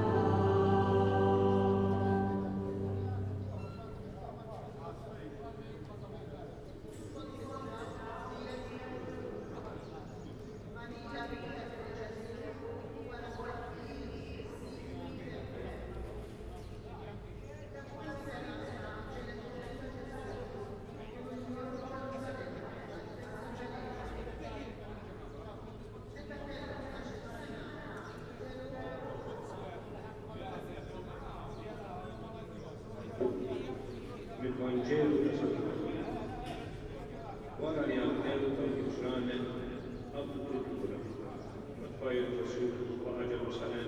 St. Catherine of Alexandria, Żejtun, Malta - at the church's door
at the door of the Parish Church dedicated to St Catherine of Alexandria
Misraħ ir-Repubblika, Żejtun. Sound from inside and outside the church, during a street procession.
(SD702, DPA4060)